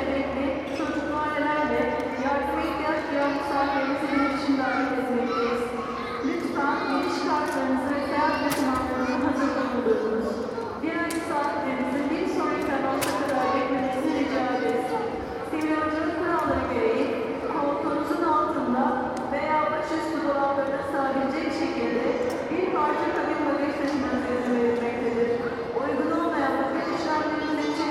Dalaman airport, Turkey - 919 airport announcements
Recording of airport announcements for a flight to Istanbul.
AB stereo recording made from internal mics of Tascam DR 100 MK III.
24 September 2022, ~12:00